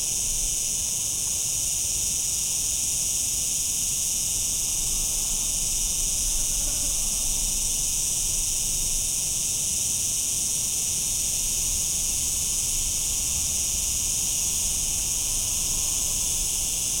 Portugal - Cicadas Alqueva
Cicada chorus captured in the Summer of 2018 in Alqueva.